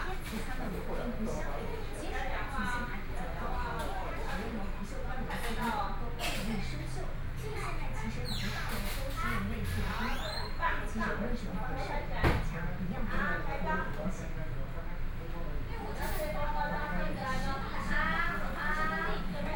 Zhongyang N. Rd., Beitou Dist. - Mother and child
Mother and child, Dental Clinic, TV sound, Physicians and the public dialogue, Binaural recordings, Sony Pcm d50+ Soundman OKM II
Taipei City, Taiwan, 14 October 2013, 20:08